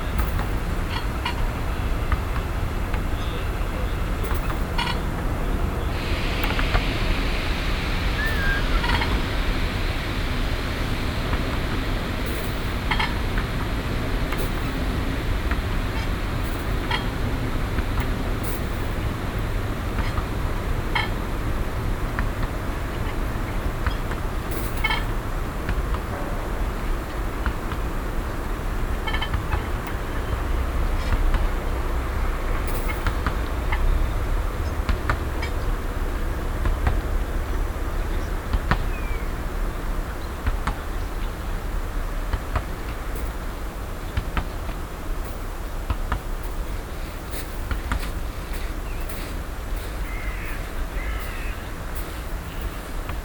cologne, maybachstrasse, grosse schaukel
nachmittags- schritte in kies, schwingen auf grosser synchronschaukel, im hintergrund ballschüsse gegen hauswand
soundmap nrw - social ambiences - sound in public spaces - in & outdoor nearfield recordings